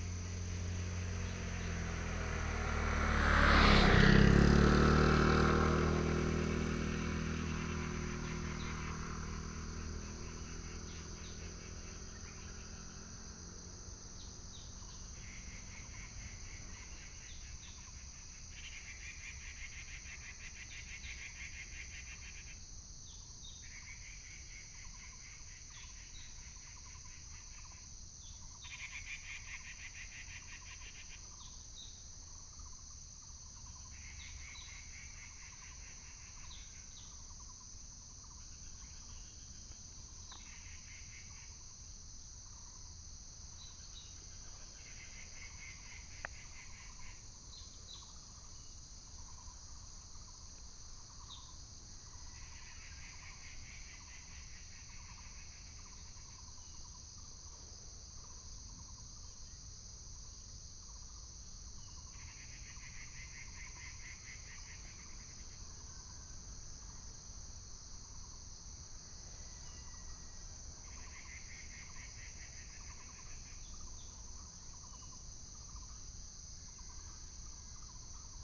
沙坑農路, Hengshan Township - birds sound
birds sound, Morning in the mountains, Insects sound, Binaural recordings, Sony PCM D100+ Soundman OKM II